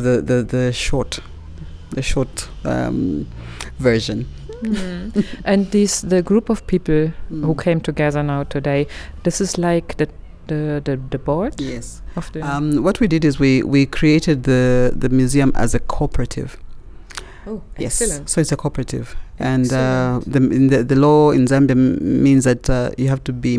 Lusaka National Museum, Lusaka, Zambia - Womens contribution to Zambian history and culture...
we are in the Lusaka National Museum... on invitation of Mulenga Kapwepwe, i had just been able to join a discussion of a resourceful group of women, among them artists, bloggers, writers, an architect, a lawyer…; they belong to a Cooperative of ten women who are the makers and movers of what is and will be the Museum of Women’s History in Zambia. After the meeting, I managed to keep Mulenga and Samba Yonga, the co-founders of the Women’s History Museum for just about long enough to tell us how this idea and organisation was born, what’s their mission and plans and how they will go about realizing their ambitious plans of inserting women’s achievements into to the gaping mainstream of history… (amazing work has been done since; please see their website for more)